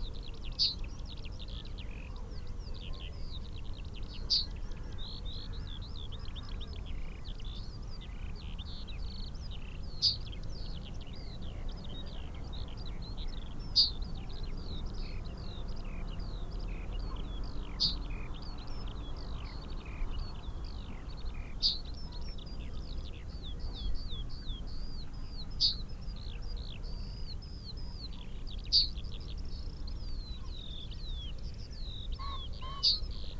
Green Ln, Malton, UK - yellowhammer ... call ... song
yellowhammer ... call ... song ... xlr SASS to Zoom H5 ... bird call ... song ... from wood pigeon ... pheasant ... skylark ... red-legged partridge ... herring gull ... linnet ... crow ... rook ... chaffinch ... blackbird ... mew gull ... taken from unattended extended unedited recording ...
14 April, 07:30